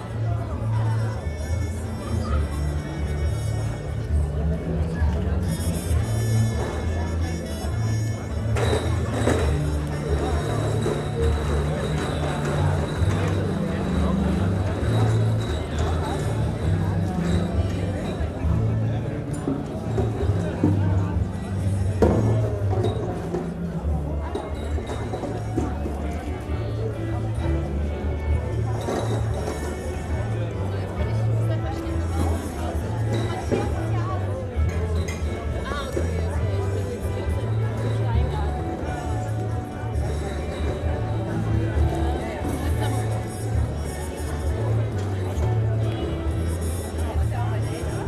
berlin, john-foster-dulles-allee: haus der kulturen der welt, terrasse - the city, the country & me: terrace of house of the cultures of the world
at the terrace during a concert of giant sand at wassermusik festival
the city, the country & me: august 5, 2011
Berlin, Germany